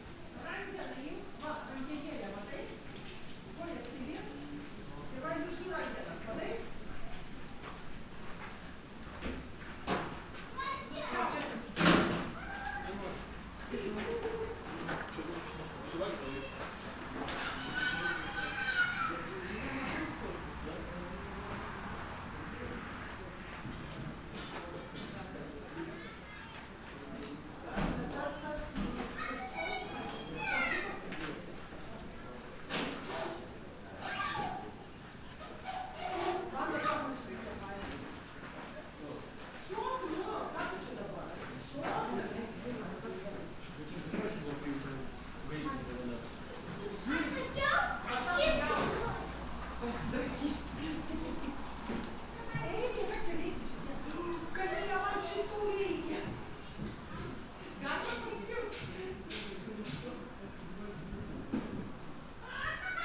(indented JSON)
{
  "title": "Lithuania, in soviet cars museum",
  "date": "2019-10-26 10:40:00",
  "description": "there's old soviet cars museum near Moletai in Lithuania. the cars from it were used in \"Chernobyl\" series.",
  "latitude": "55.12",
  "longitude": "25.33",
  "altitude": "197",
  "timezone": "Europe/Vilnius"
}